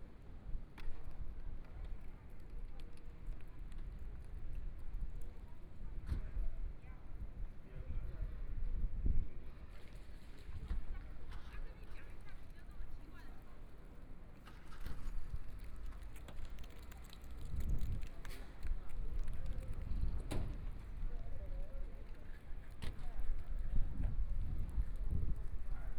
普天宮, Fangyuan Township - in front of the temple
In the square in front of the temple, Tourists and vendors, Traffic Sound, Zoom H4n+ Soundman OKM II, Best with Headphone( SoundMap20140105- 3 )
Fangyuan Township, 芳漢路芳二段161巷100號, January 2014